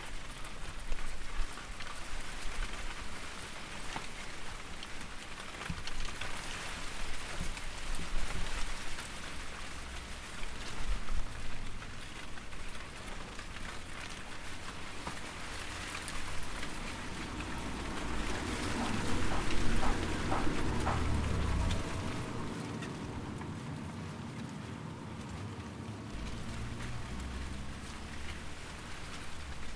Rue de l'Église, Chindrieux, France - Bananier
Feuilles de bananier dans le vent, ici les feuilles des bananier sont souvent en lambeaux et en partie sèches nous sommes loin du cliat tropical humide, elles sonnent d'une manière particulière dans le vent en se frottant les une contre les autres.
France métropolitaine, France, 1 September 2022, ~19:00